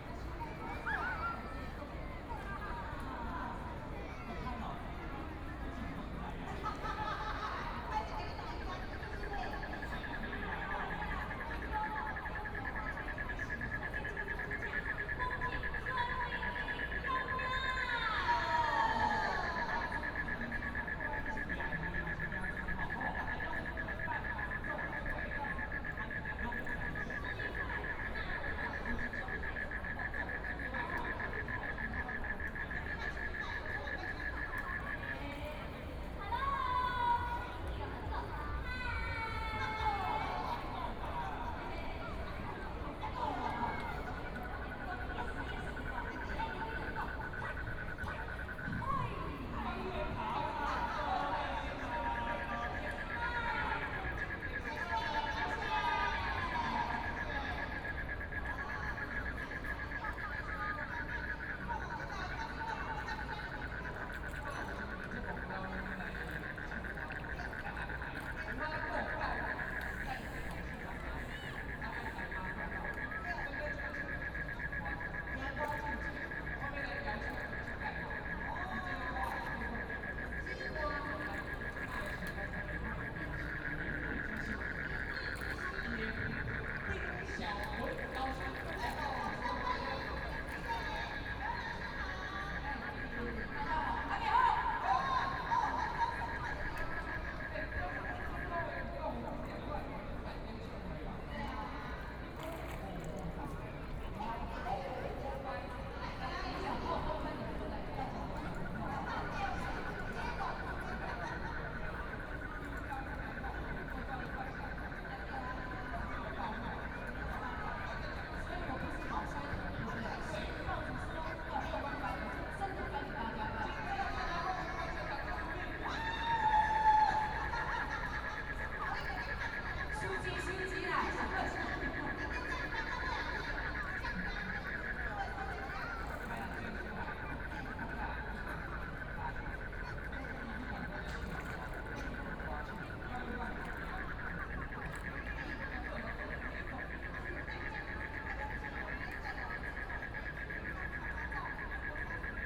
At the lake, A lot of people waiting for fireworks, Frogs sound
Please turn up the volume a little. Binaural recordings, Sony PCM D100+ Soundman OKM II